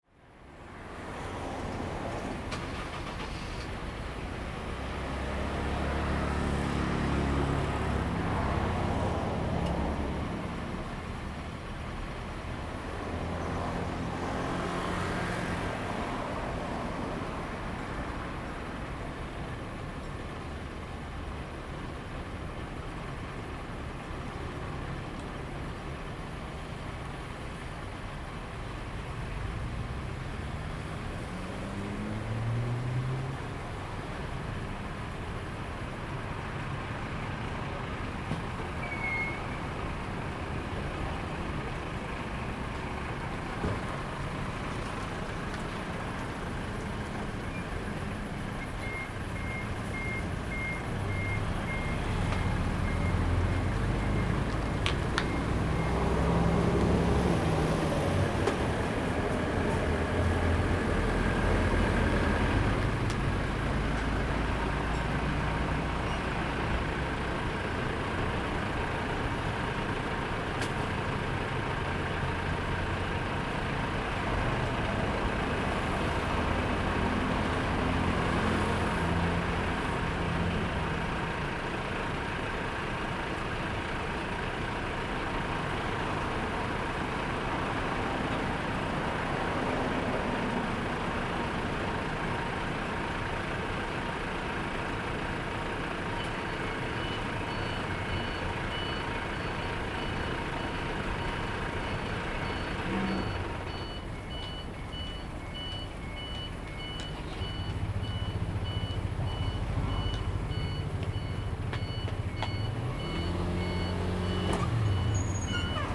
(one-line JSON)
{"title": "Zhishan Rd., Taimali Township - Street corner", "date": "2014-09-05 10:58:00", "description": "Street corner, next to the convenience store, Parking\nZoom H2n MS +XY", "latitude": "22.61", "longitude": "121.01", "altitude": "15", "timezone": "Asia/Taipei"}